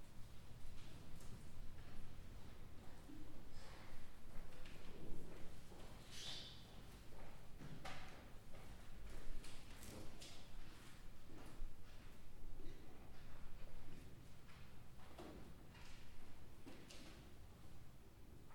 {"title": "Stadsbibliotheek, Mechelen, België - Stadsbibliotheek Mechelen", "date": "2019-02-01 15:40:00", "description": "[Zoom H4n Pro] Sounds from the balcony in the main hall of the Mechelen public library", "latitude": "51.02", "longitude": "4.48", "altitude": "11", "timezone": "Europe/Brussels"}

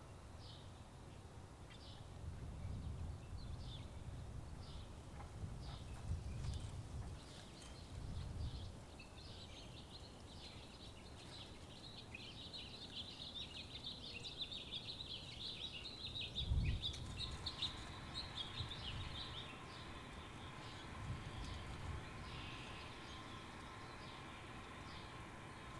{"title": "Waters Edge - Warning Siren Test and Street Sweeper", "date": "2022-05-04 12:46:00", "description": "On the first Wednesday of every month in the state of Minnesota the outdoor warning sirens are tested at 1pm. This is a recording of one such test. Shortly after the test concludes a street cleaner comes by to clean all the sand from the winter off the streets. This is a true sign of spring", "latitude": "45.18", "longitude": "-93.00", "altitude": "278", "timezone": "America/Chicago"}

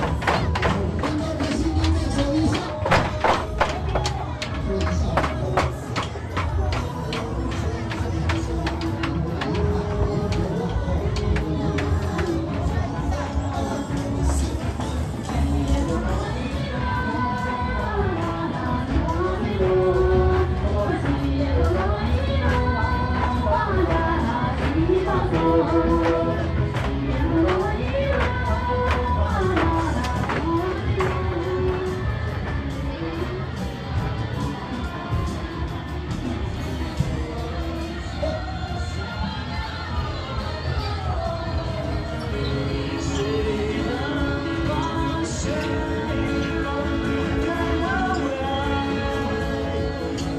{"title": "Bar Street, Lijiang, Yunnan Province, China", "date": "2009-06-07 22:53:00", "description": "Lijiang, Yunnan, walk on Bar Street, June 2009", "latitude": "26.88", "longitude": "100.23", "altitude": "2406", "timezone": "Asia/Shanghai"}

